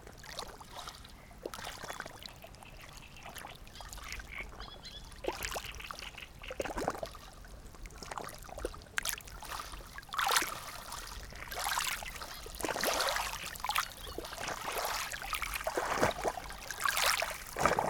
Ohrid, Macedonia (FYROM) - Lagadin, Ohrid Lake
Recordings done with Tascam DR-100 MKII for the purpose of the Worlds listening day 2015, one relaxed night at the beach at Lagadin on the Ohrid lake.